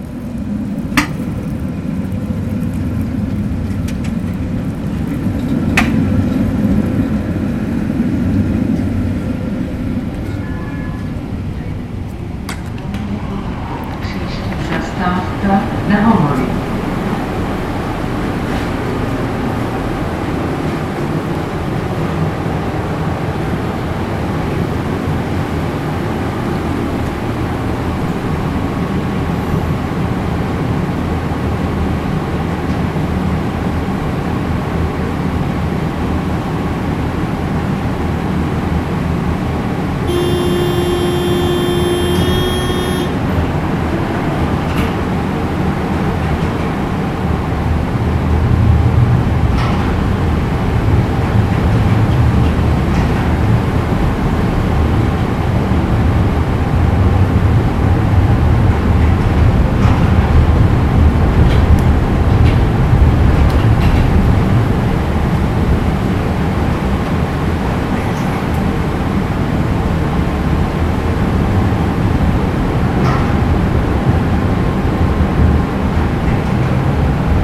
Depo Hostivar
tram ride from end stop of nr.7 till end stop of metro Hostivar. January 6 2009